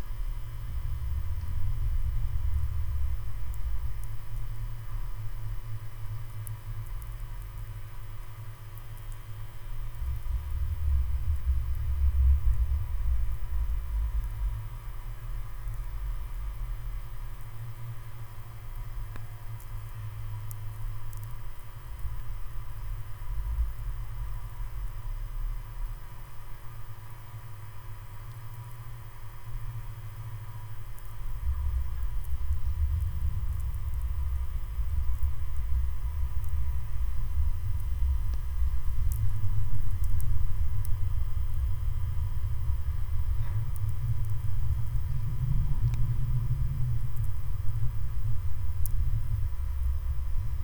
Vilnius, Lithuania, abandoned Rotonda caffee
there is an empty building of cult/ legendary caffee "Rotonda" in the centre of LIthuania capital. in soviet times it was place of meeting of artists, poets, etc...now it stands abandoned. contact microphones on metallic parts of the circullar building and electromagnetic antenna Priezor capturing electro atmosphere
1 October 2018, 13:20